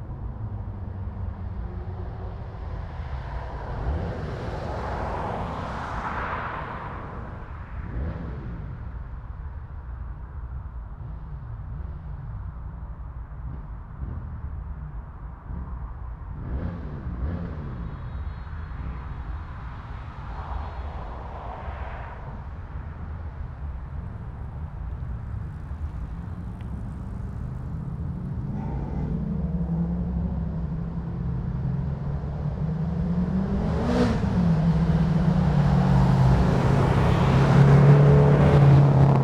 These boys have been using the roads of Reading for practice during lockdown, often cruising around midnight and 5.30am. The racing went on for a couple of hours with other cars, vans, buses and trucks having to negotiate their way along the 'racetrack'. The exhausts on some cars exploding and back firing like fire crackers. Sony M10 with built-in mics.